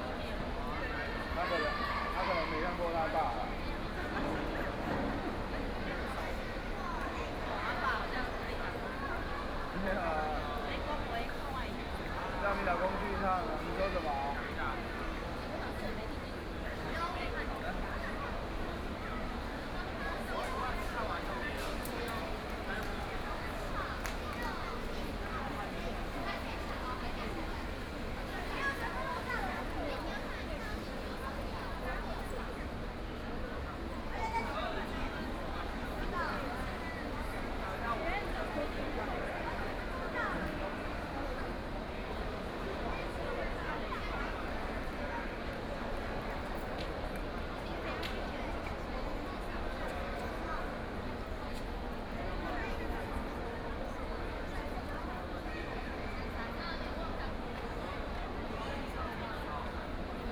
Tamkang University, New Taipei City - Swimming Competition
Swimming Competition, Elementary school swim race, Sitting in the audience of parents and children, Binaural recordings, Zoom H6+ Soundman OKM II